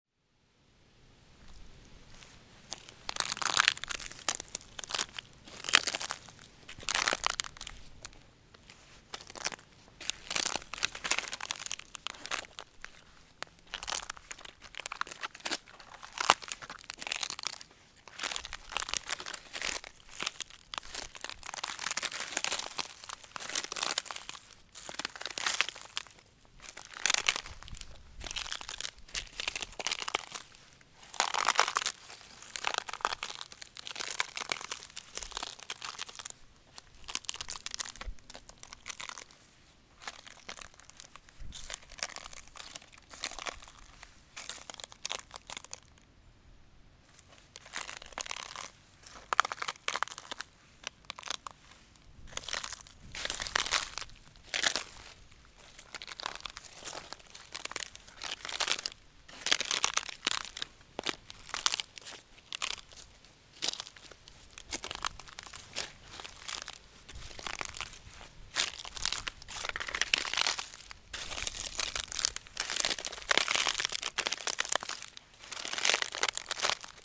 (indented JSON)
{
  "title": "Mettray, France - Sycamores",
  "date": "2017-08-12 15:10:00",
  "description": "The Mettray prison has a lot of sycamores. How to explain that with sound ? It's a special place and I was wishing to show that. On the ground, there's a lot of platelets, it's dead barks. I'm walking on it in aim to produce the cracks.",
  "latitude": "47.45",
  "longitude": "0.66",
  "altitude": "95",
  "timezone": "Europe/Paris"
}